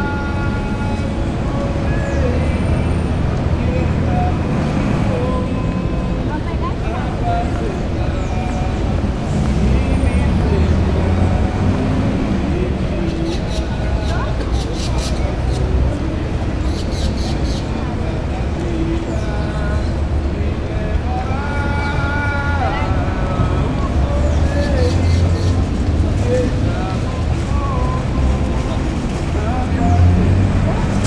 Sao Paulo, Praca da Sé